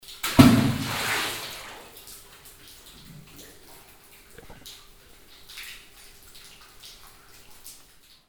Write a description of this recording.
In the bottom of the floor is a hole that leads to the water filled lower levels. The sound of a stone that is thrown into the water hole. Stolzemburg, alte Kupfermine, Wassertropfen, In einem Seitenteil des Minentunnels. Wasser rinnt von einem höheren Level, der früher der Weg hinauf zum Schachtturm war. Stolzembourg, ancienne mine de cuivre, pierre dans une mare, A l’intérieur d’une galerie latérale du tunnel de la mine. De l’eau coule depuis le niveau supérieur dans ce qui était le chemin vers le puits.